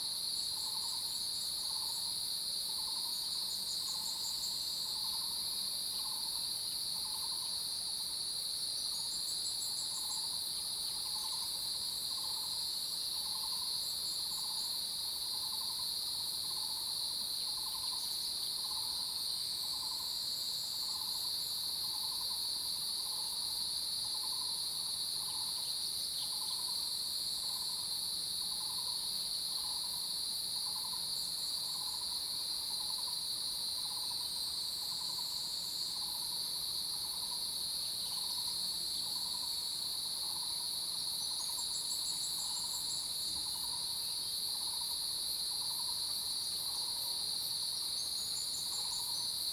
油茶園, 魚池鄉五城村, Nantou County - Cicada sounds
early morning, Birds and Cicada sounds